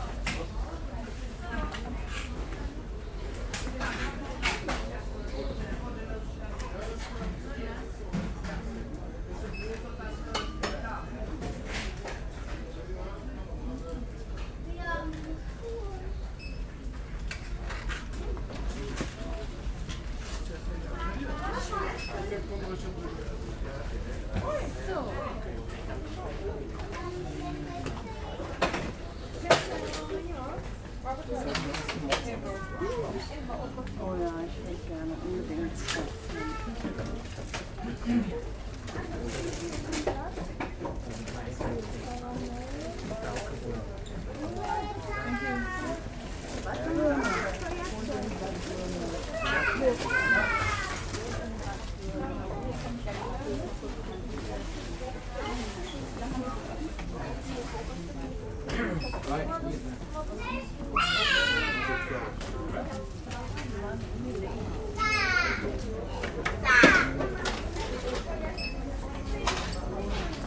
baltic sea, night ferry, duty free shop
recorded on night ferry trelleborg - travemuende, august 10 to 11, 2008.